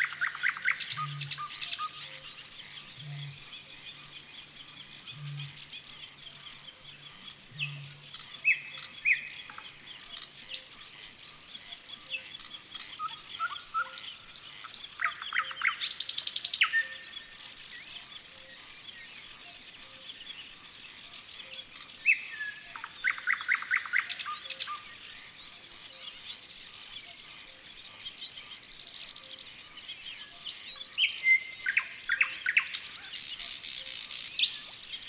Ahja river crossing nature, Lääniste
nighting gale and other birds